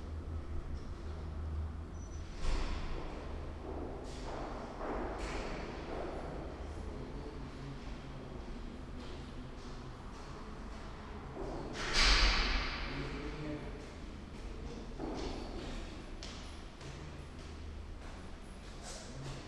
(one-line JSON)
{"title": "berlin, sonnenallee: agentur für arbeit berlin süd - the city, the country & me: employment agency", "date": "2010-08-31 12:51:00", "description": "stairwell of employment agency\nthe city, the country & me: august 31, 2010", "latitude": "52.47", "longitude": "13.46", "altitude": "33", "timezone": "Europe/Berlin"}